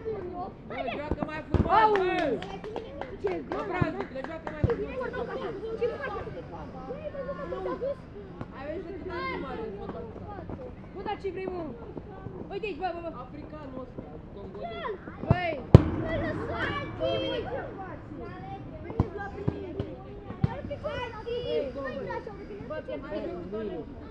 Recording outside a small, concrete-floor football field with a SuperLux S502 ORTF Stereo Mic plugged into Zoom F8